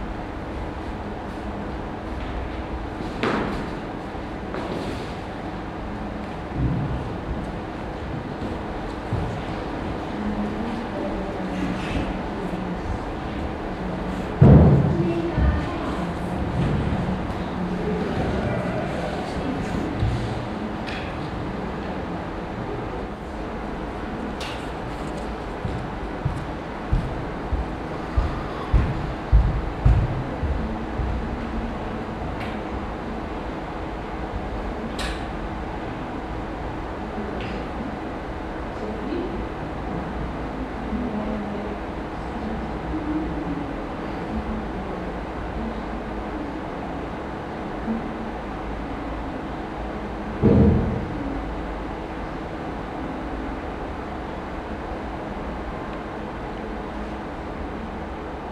Inside the studio hall of the hungarian theatre. The sounds of silent conversations and warm upmovements of a dancer on stage.
international city scapes - topographic field recordings and social ambiences